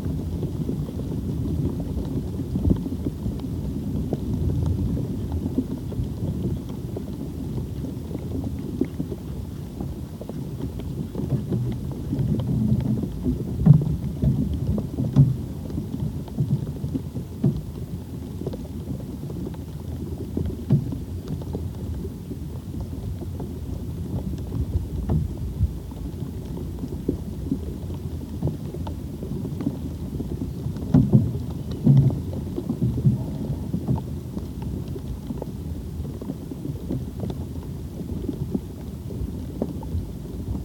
Recorded after the mallard heart beat this must be the sound of 10 ducklings peckiing their way out of the shells. Recorded with the same contact mic fixed under the roof planks and a MixPre 3
West Midlands, England, United Kingdom, 11 April